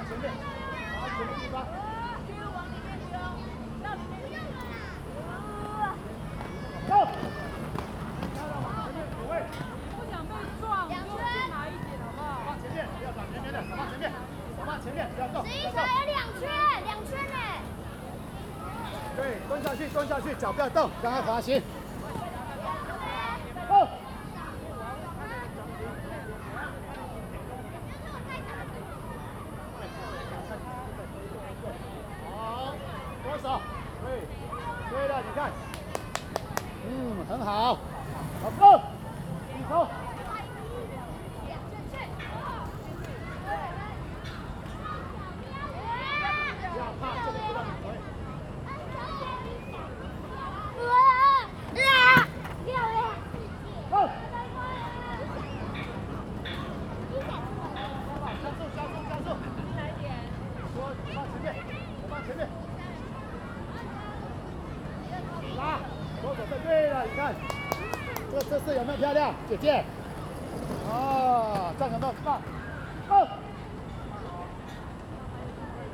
大安森林公園, 大安區, Taipei City - Skates field
Skates field, Many children are learning skates
Zoom H2n MS+XY
Taipei City, Taiwan, 25 July